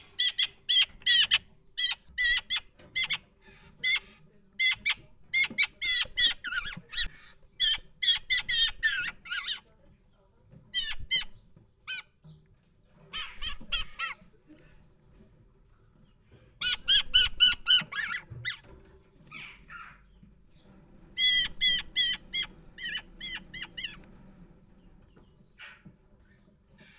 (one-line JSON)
{"title": "Ottignies-Louvain-la-Neuve, Belgique - Birdsbay, hospital for animals", "date": "2016-06-27 20:00:00", "description": "Birdsbay is a center where is given revalidation to wildlife. It's an hospital for animals.\n0:00 to 3:30 - Nothing's happening. Increasingly, a jackdaw asks for food.\n3:30 to 4:55 - Giving food to the four jackdaws.\n4:55 to 6:43 - Giving food to the three magpies.", "latitude": "50.66", "longitude": "4.58", "altitude": "78", "timezone": "Europe/Berlin"}